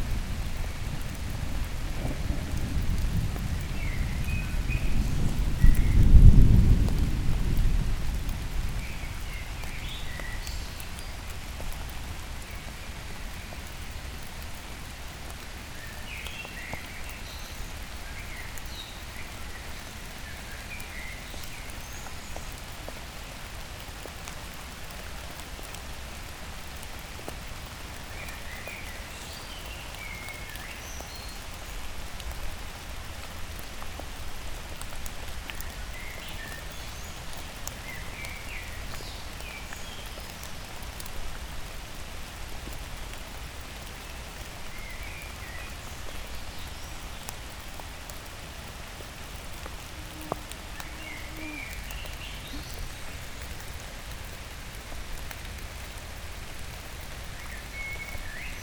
Mont-Saint-Guibert, Belgique - Rain
It's raining since a long time. It's a small storm. Trees trickle on the ivy. It's a quiet place, the road is so bad (very old cobblestones) that nobody's passing by there. And rain fall, fall and fall again !